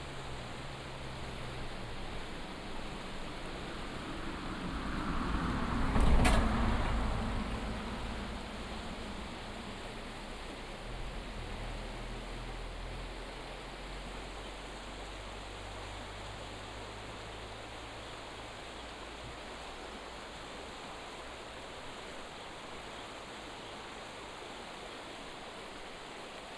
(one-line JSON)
{"title": "金崙溫泉區, Taitung County - On the bridge", "date": "2018-04-01 16:54:00", "description": "Stream sound, On the bridge, Bird cry", "latitude": "22.53", "longitude": "120.94", "altitude": "54", "timezone": "Asia/Taipei"}